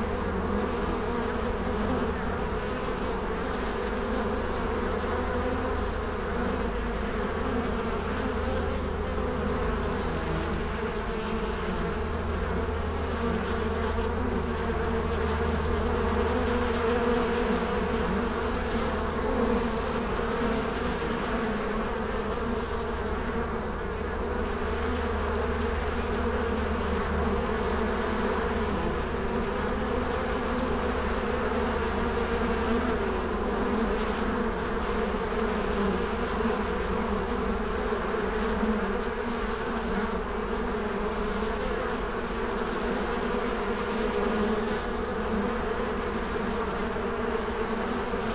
Friedhof Columbiadamm, Berlin - busy bee hive
late summer afternoon, busy bee hive at graveyard Friedhof Columbiadamm, Berlin
Some bees are investigating the wind screen closely.
(Sony PCM D50, Primo EM172)